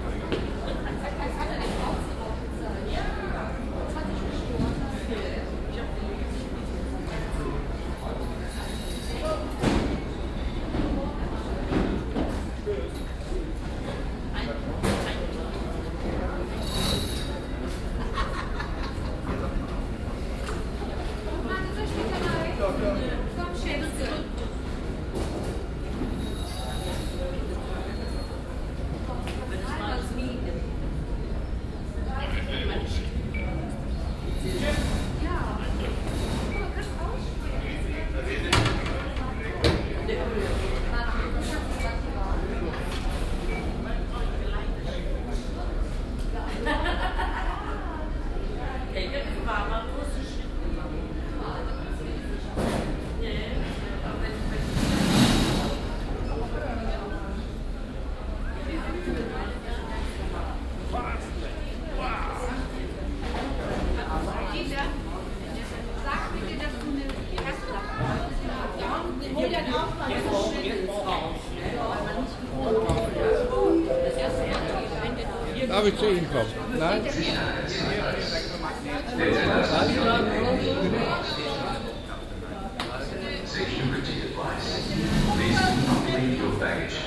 cologne -bonn, airport, check in - koeln-bonn, flughafen, check in
menschen und geräte am check in
project: social ambiences/ listen to the people - in & outdoor nearfield recordings
25 April